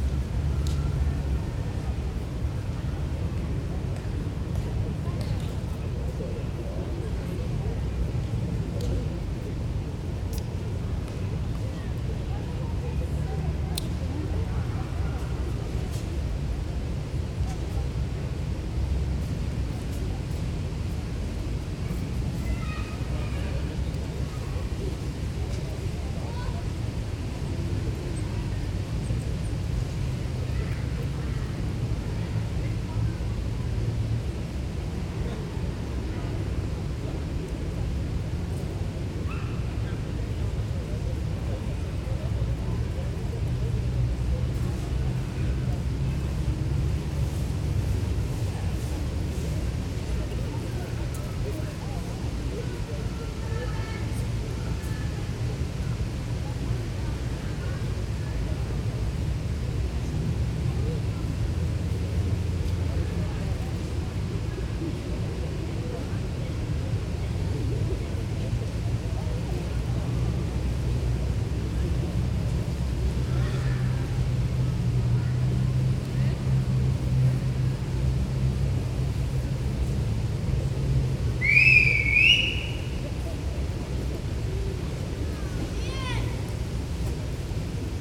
ambient city sounds filtering into the park in central London